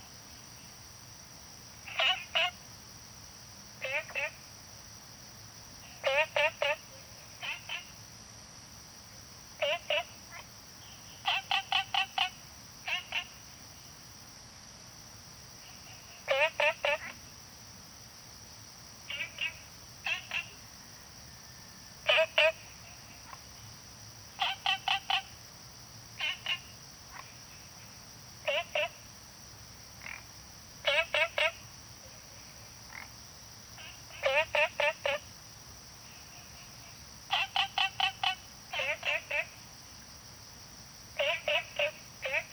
Taomi Ln., Puli Township - Frogs chirping
Frogs chirping, Ecological pool
Zoom H2n MS+XY